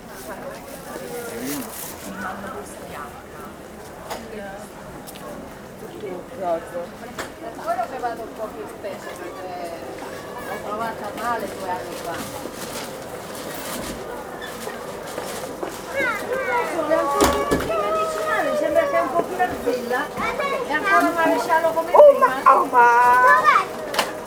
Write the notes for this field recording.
Open air market in the small town of Broni. Quiet people passing by and talking, sellers from different parts of the world call out for shoppers to buy their goods (fruit, vegetable, cheese) by repeating the same leit motiv endelssly ("la vera toma del Piemonte, Varallo Sesia")